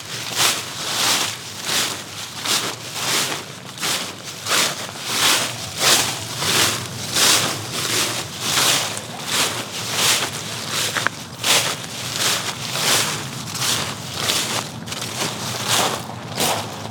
Innenstadt - St. Ulrich-Dom, Augsburg, Germany - Walking on leaves
walking on leaves